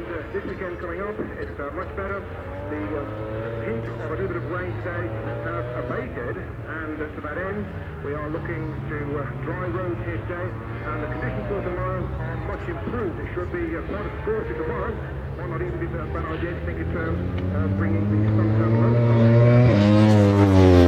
Silverstone Circuit, Towcester, United Kingdom - World Superbike 2003 ... Qualifying ...
World Superbike 2003 ... Qualifying ... part one ... one point stereo mic to minidisk ...